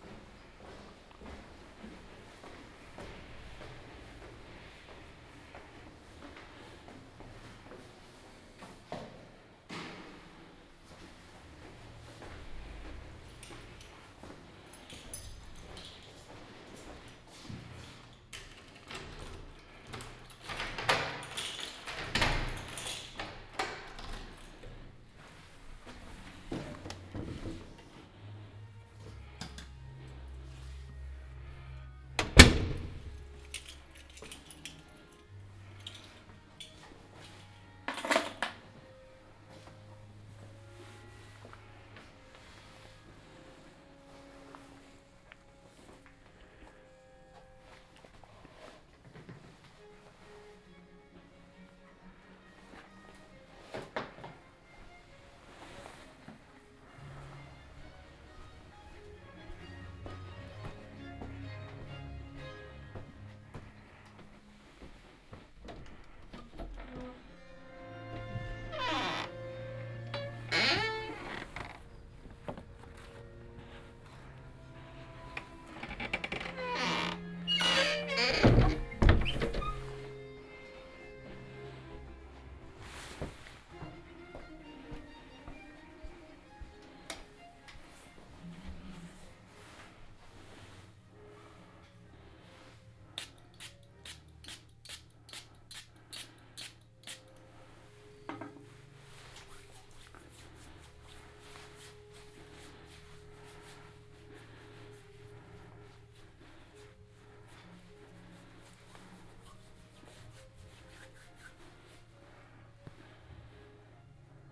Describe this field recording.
"Round Noon bells on Sunday in the time of COVID19" Soundwalk, Chapter XVIII of Ascolto il tuo cuore, città. I listen to your heart, city, Sunday, March 22th 2020. San Salvario district Turin, walking to Corso Vittorio Emanuele II and back, twelve days after emergency disposition due to the epidemic of COVID19. Start at 11:45 p.m. end at 12:20p.m. duration of recording 35'30'', The entire path is associated with a synchronized GPS track recorded in the (kmz, kml, gpx) files downloadable here: